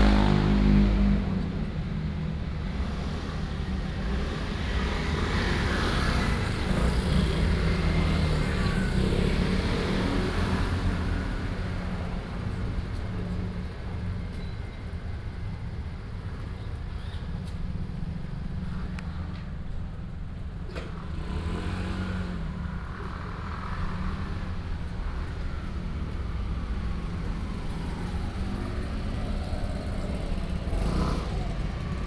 {"title": "Ln., Zhongzheng Rd., Tamsui Dist. - Sitting on the corner street", "date": "2016-03-14 16:00:00", "description": "Sitting on the corner street, Traffic Sound", "latitude": "25.17", "longitude": "121.44", "altitude": "10", "timezone": "Asia/Taipei"}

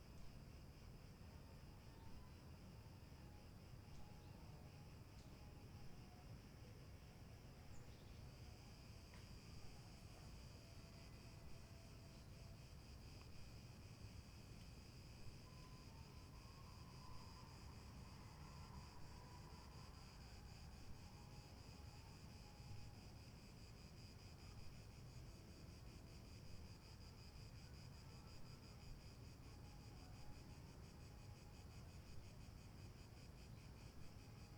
{"title": "Athens, Greece - Strefi Hill", "date": "2015-10-18 14:26:00", "description": "I sat on a wall lining one of the paths to the top of Strefi Hill and pressed record. The sound of people talking, a flute?, dogs barking, but most prominently an unusual sounding bird.", "latitude": "37.99", "longitude": "23.74", "altitude": "129", "timezone": "Europe/Athens"}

